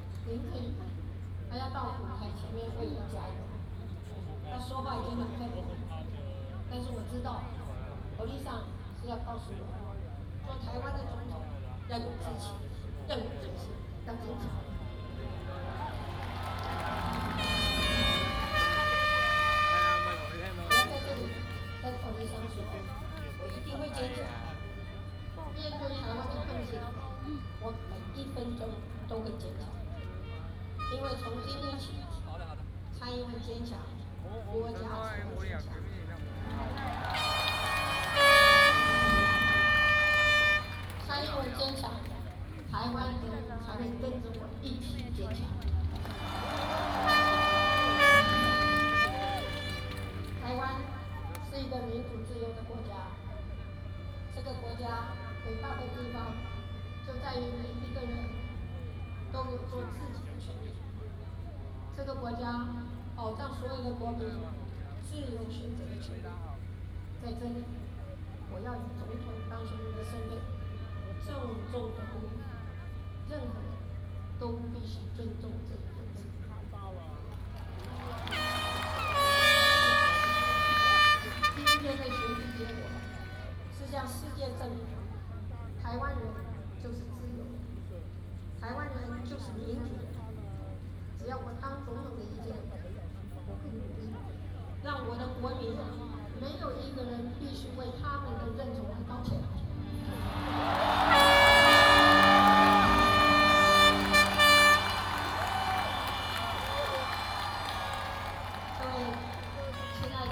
by democratic elections, Taiwan's first female president
Taipei City, Taiwan, 16 January 2016